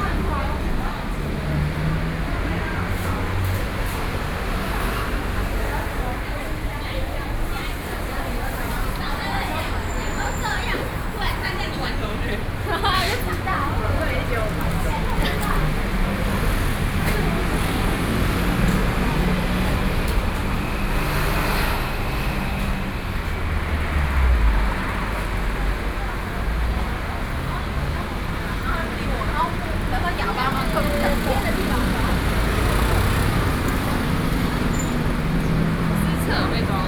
No., Bó'ài St, Yonghe District, New Taipei City - SoundWalk
New Taipei City, Taiwan, 7 December 2012